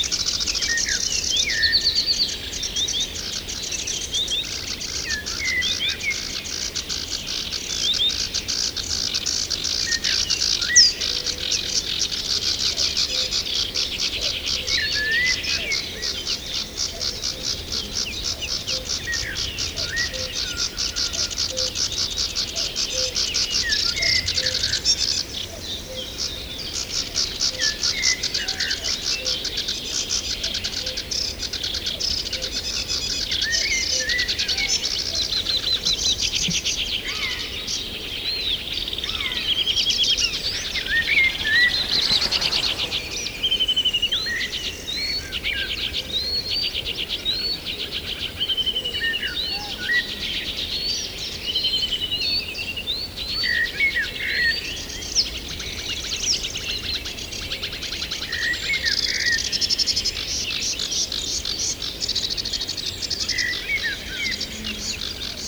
Early in the morning with lots of mosquitos around.